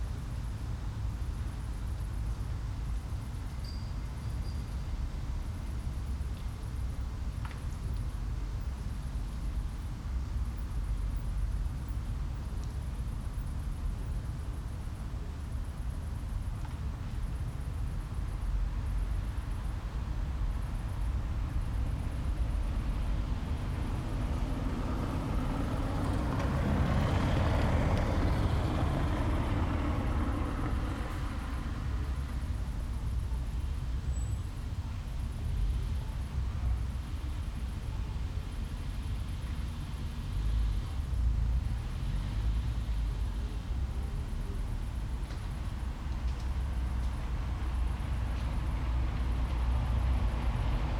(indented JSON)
{
  "title": "Friedhof der Sophiengemeinde, Ackerstraße, Mitte, Berlin, Deutschland - Ackerstraße, Berlin - Cemetary at night",
  "date": "2007-09-23 22:30:00",
  "description": "Ackerstraße, Berlin - Cemetary at night. Crickets, passers-by, qiet traffic, wind in the trees, distant tram.\n[I used an MD recorder with binaural microphones Soundman OKM II AVPOP A3]",
  "latitude": "52.53",
  "longitude": "13.39",
  "altitude": "40",
  "timezone": "Europe/Berlin"
}